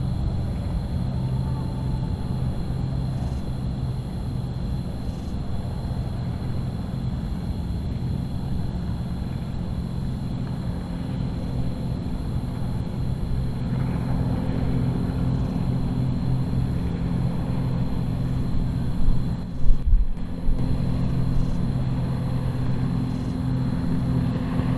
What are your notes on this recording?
Top of Mt. Bonnell, Austin, Tx. Overlooking river. Field, Nature.